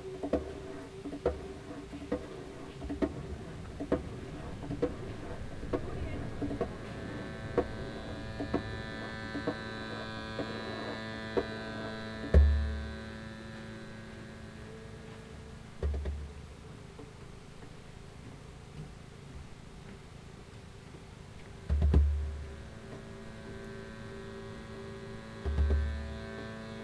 Zagreb, Hrvatska - Ispod mosta
Glazbenici Jurica Pačelat, Ivan Šaravanja i Vedran Živković sviraju uz lokalni soundscape parka Maksimir. Ideja je da tretiraju soundscape kao još jednog glazbenika i sviraju uz njega, ne imitirajući ga. Snimka je uploadana kao primjer za tekst diplomskog rada za studij Novih Medija na Akademiji likovnih umjetnosti sveučilišta u Zagrebu.Tin Dožić
Zagreb, Croatia, 14 September 2015